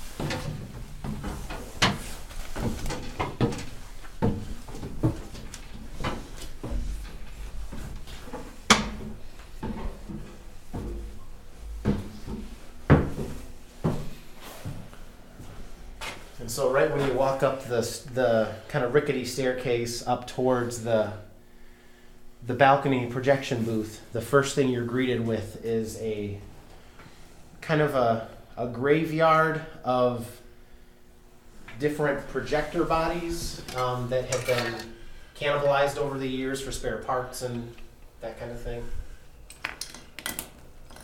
Vogue Theatre, River St., Manistee, MI, USA - Projector Booth
Tour of old projector booth, shortly before start of renovation project (theatre built in 1938). Voice of Travis Alden. Climbing ladder, sounds of old projector parts piled on floor and metal cabinets for film reels. Stereo mic (Audio-Technica, AT-822), recorded via Sony MD (MZ-NF810).
23 March 2011